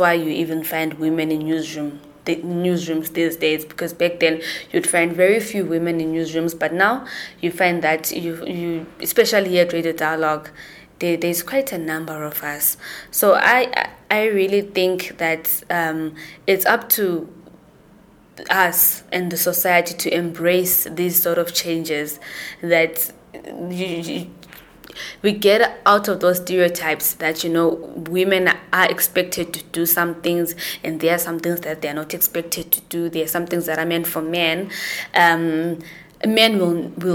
Nothando Mpofu, the station’s community liaison and advocacy officer tells about the challenges of women in the media.